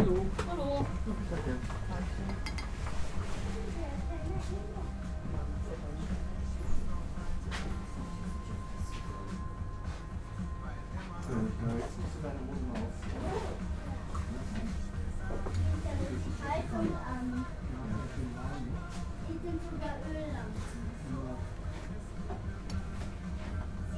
Hafenbaude, Berlin Köpenick - pub ambience
Hafenbaude, a little pub at the ferry boat pier, Sunday afternoon ambience
(Sony PCM D50, Primo EM172)
Berlin, Germany, 16 October, ~14:00